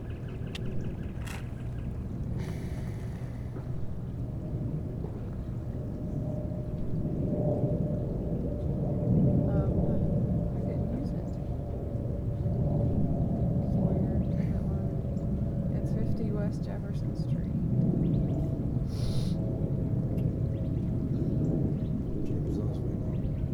neoscenes: on a red couch
Tempe, AZ, USA, 20 January